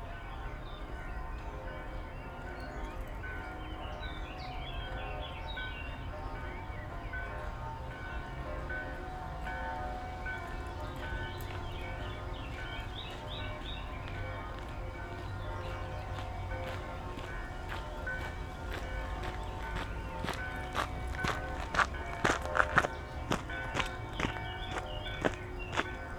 Maribor, Piramida - pentecoste sunday soundscape
below Piramida, a little chapel on the vineyard hills above Maribor, a really bad band is playing in the distant center of town, various churchbells come in, bikers and pedestrians climbing up the hill, wind.
(SD702, AT BP4025)
Maribor, Slovenia, 27 May, 12:00